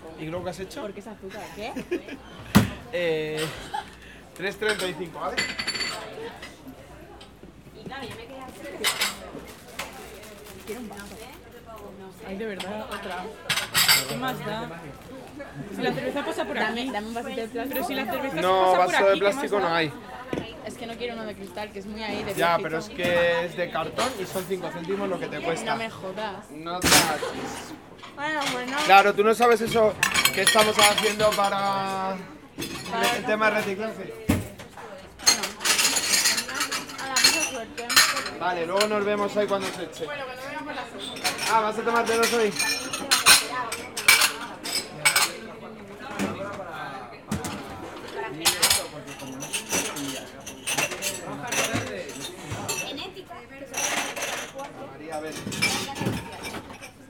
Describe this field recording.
This audio shows the different sounds that we can hear in the snack bar of the Faculty of Philosophy of the University. You can hear: - Waiter / Client talking, - Dish, Glass, and Cutlery noises, - Coin noise, - Background Voices, - Dishwasher, Gear: - Zoom h4n, - Cristina Ortiz Casillas, - Erica Arredondo Arosa, - Carlos Segura García